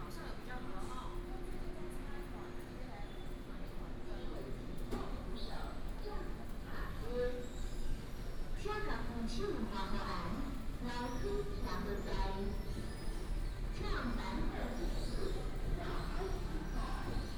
Zhunan Station, 苗栗縣竹南鎮 - Walking at the station
Walking at the station, To the station exit, Traffic Sound
Miaoli County, Taiwan, 18 January 2017